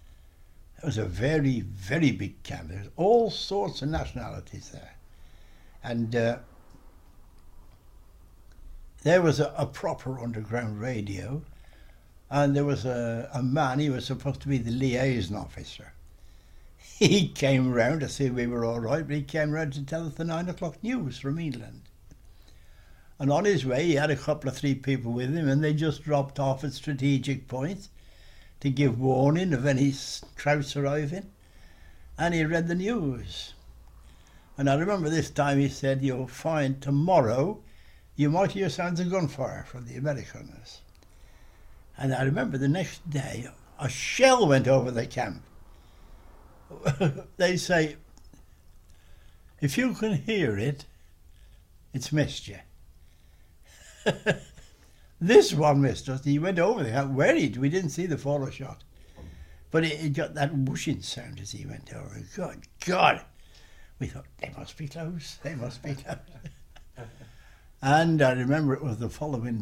Blechhammer, Kędzierzyn-Koźle, Poland - A POW Remembers
An aural document by Harold Pitt, POW No 5585 who was captured 26th May 1940 and spent some years at this spot in Bau und Arbeits Battallion (BAB) 21, a work camp for British Prisoners of War. He was liberated by the Americans in April 1945. He died 10th April (my birthday) 2011 aged 93. He was my father.